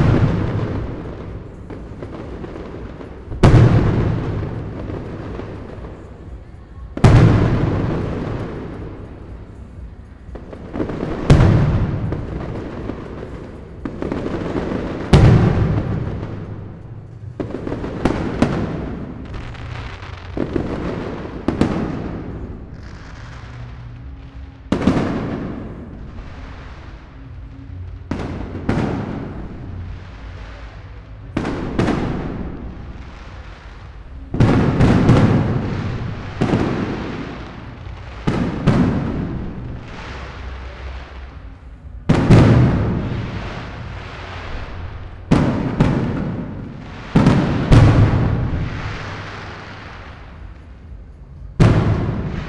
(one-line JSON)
{"title": "Maribor, Slovenia - stars falling", "date": "2015-07-12 00:02:00", "description": "fireworks, saturday night", "latitude": "46.56", "longitude": "15.65", "altitude": "269", "timezone": "Europe/Ljubljana"}